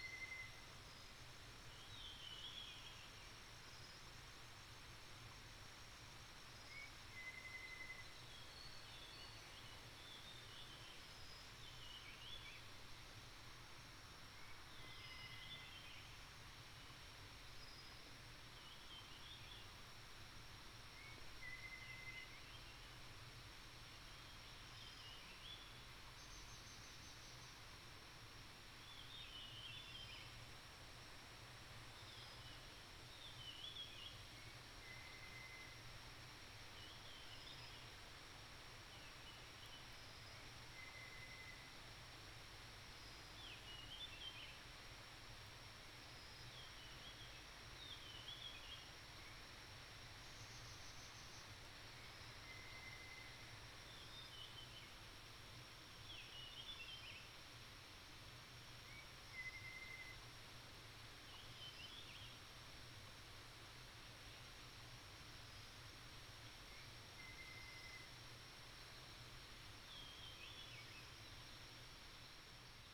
種瓜坑, 埔里鎮桃米里, Nantou County - Stream and Birds
Bird sounds, in the woods, Stream sound
28 April 2016, 07:59